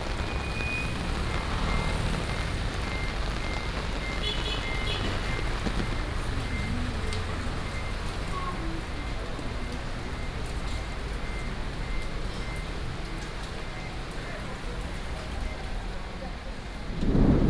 Ren 3rd Rd., Ren’ai Dist., Keelung City - walking in the Street
Thunderstorms, Traffic Sound, Various shops sound, rain
Keelung City, Taiwan, 18 July 2016